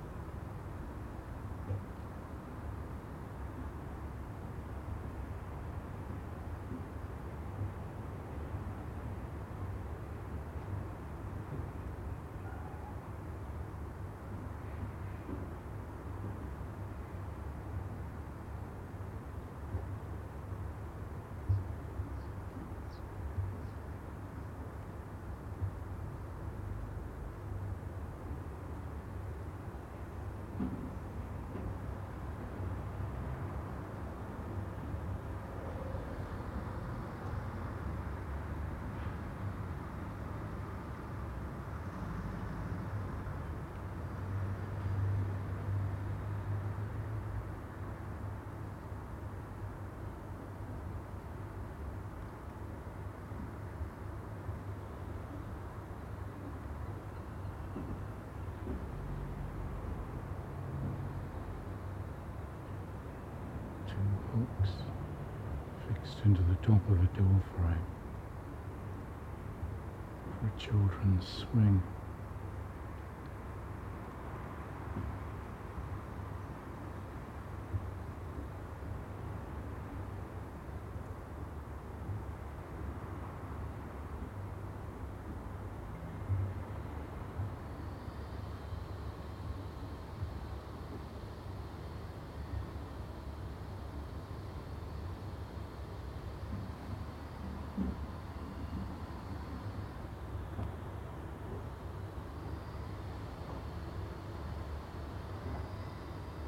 Contención Island Day 7 outer north - Walking to the sounds of Contención Island Day 7 Monday January 11th
The Poplars Roseworth Avenue The Grove Moor Park North Alwinton Terrace
Across the alley
behind a black garage door
the churn and tump of a tumble drier
Stories written in the brickwork of back walls
lintels and sills from coal holes
lost doors
A woman opens her garage door
takes boxes and bags out of the boot of her BMW
she regards me
I greet her
Rooflines
sway-backed between loft extensions.